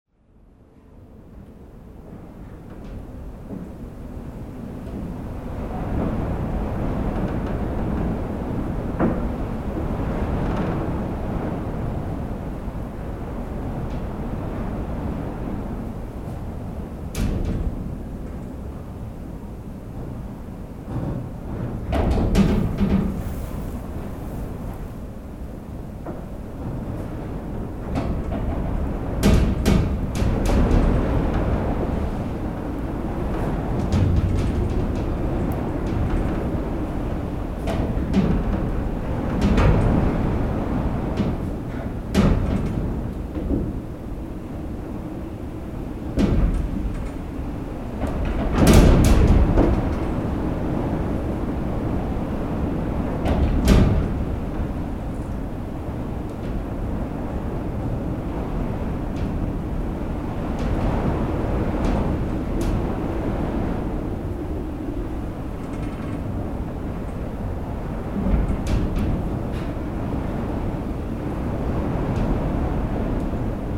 Outside, this is a terrible tempest, with horrible cold wind. This tempest is recorded inside a stable, wind try to destroy the rooftop. Weather was so bad that this inhabitant gave me hospitality in this barn. Strong memory...